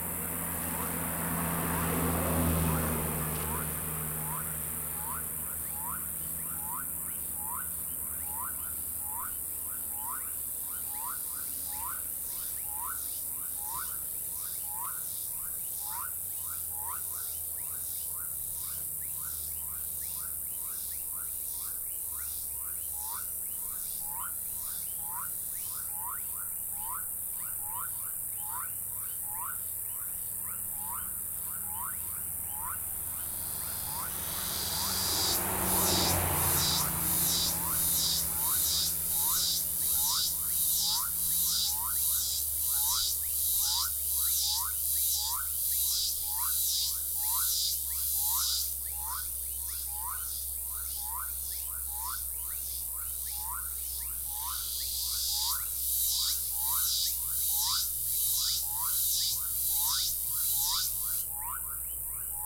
Paz de Ariporo, Casanare, Colombia - AL lado de la carretera
Varios Grillos y sapos al lado de una carretera.
4 June